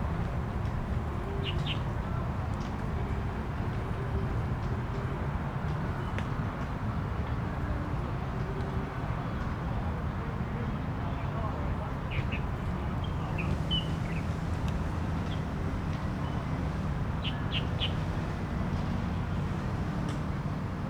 Erchong Floodway, New Taipei City - Hot summer
Basketball, Birdsong, Rode NT4+Zoom H4n